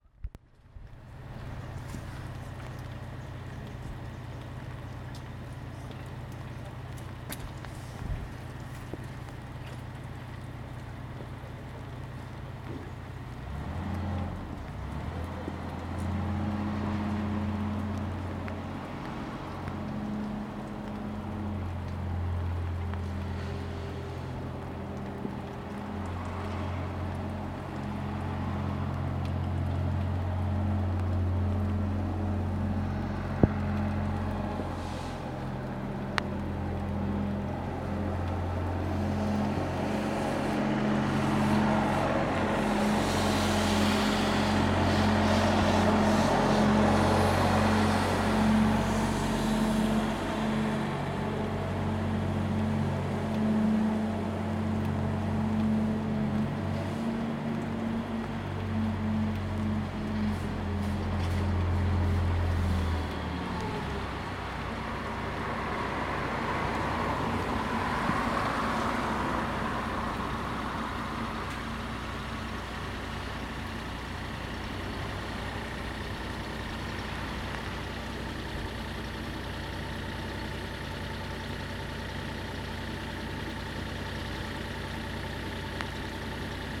{"title": "Newington Rd, Newcastle upon Tyne, UK - Street cleaning machine, Newington Road", "date": "2019-10-13 14:30:00", "description": "Walking Festival of Sound\n13 October 2019\nStreet cleaning machine leaving depot", "latitude": "54.98", "longitude": "-1.60", "altitude": "35", "timezone": "Europe/London"}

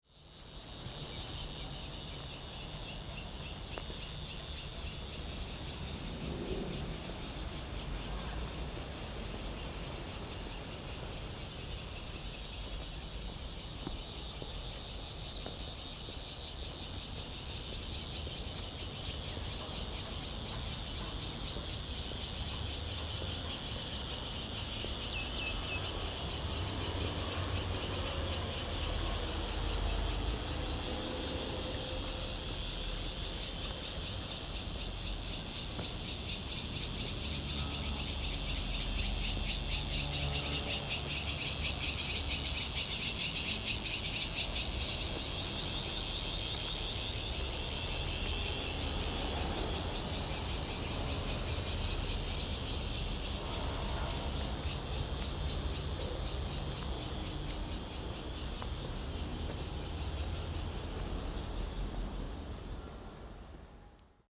{"title": "Sec., Danjin Rd., Tamsui Dist., New Taipei City - Birds and Cicada sounds", "date": "2012-06-25 10:19:00", "description": "Cicada sounds, Birds singing\nZoom H4n+Rode NT4 ( soundmap 20120625-6)", "latitude": "25.23", "longitude": "121.45", "altitude": "27", "timezone": "Asia/Taipei"}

